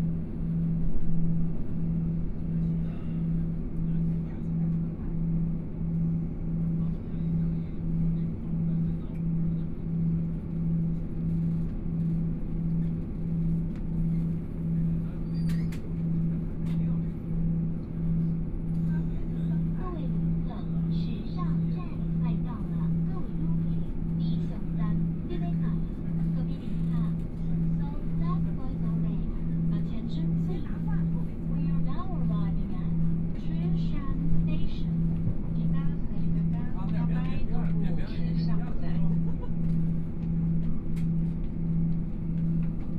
Chihshang Township, Taitung County - Tze-Chiang Limited Express
Noise inside the train, Train voice message broadcasting, Dialogue between tourists, Mobile voice, Binaural recordings, Zoom H4n+ Soundman OKM II